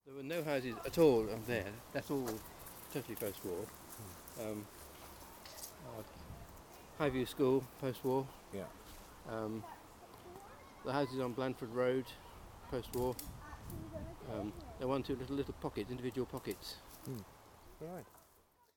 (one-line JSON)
{"title": "Efford Walk Two: More Little America - More Little America", "date": "2010-10-04 10:17:00", "latitude": "50.39", "longitude": "-4.10", "timezone": "Europe/London"}